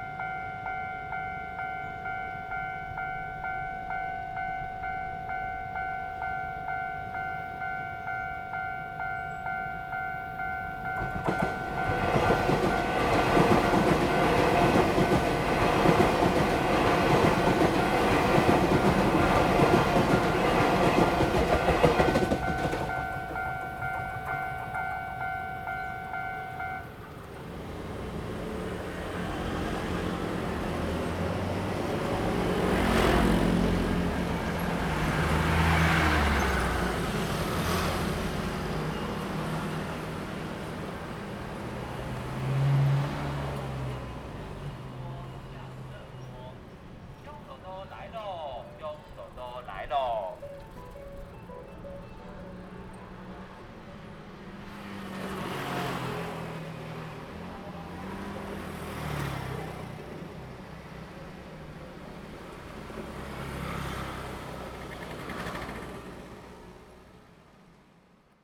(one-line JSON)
{"title": "Changshun St., Changhua City - in the railroad crossing", "date": "2017-02-15 14:56:00", "description": "On the railroad crossing, The train runs through, Traffic sound\nZoom H2n MS+XY", "latitude": "24.09", "longitude": "120.55", "altitude": "24", "timezone": "Asia/Taipei"}